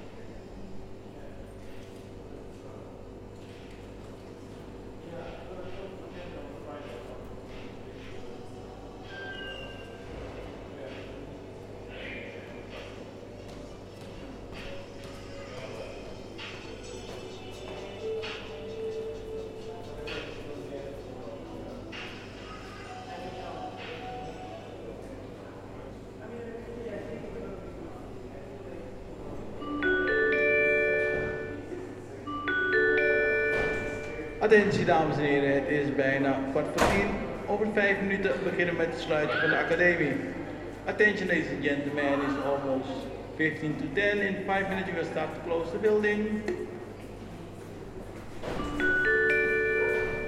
Gerrit Riegveld Academie - Anouncment to close the building
Two people parodying the voice that will announce the closing of the academie like every evening.